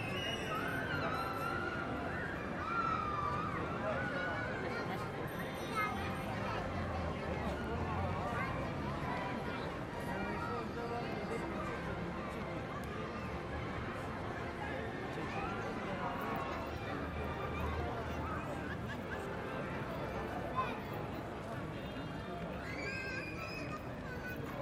Ανθυπασπιστού Μιλτιάδη Γεωργίου, Ξάνθη, Ελλάδα - Central Square/ Κεντρική Πλατεία- 20:15
Kids playing, bike bell rings, people talking, music on speakers.